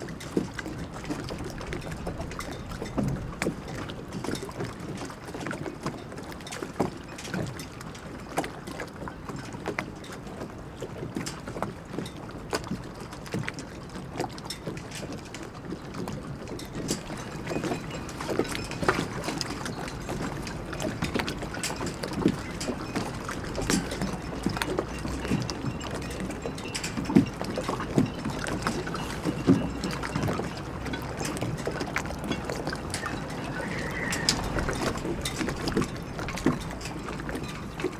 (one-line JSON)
{"title": "Ploumanach, Port, France - Port at Night wriggling Boats and Wind, Close", "date": "2015-03-18 23:11:00", "description": "La nuit sur le port de ploumanac'h, les bateaux barbotent énergiquement le vent fait siffler les cordages et les mats s'entrechoquent.\nA night at the Port, Boats are splashing, wind is whistling, masts are chiming.\nClose up.\n/Oktava mk012 ORTF & SD mixpre & Zoom h4n", "latitude": "48.83", "longitude": "-3.49", "altitude": "18", "timezone": "GMT+1"}